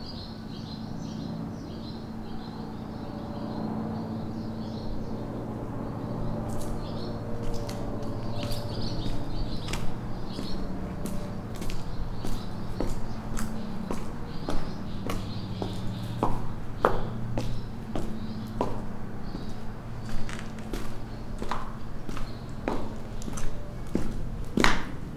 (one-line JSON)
{"title": "Ptuj, Slovenia - with clogs, up and down the street", "date": "2014-07-29 19:35:00", "description": "street and yard ambience, walk, clogs, birds ...", "latitude": "46.42", "longitude": "15.87", "altitude": "228", "timezone": "Europe/Ljubljana"}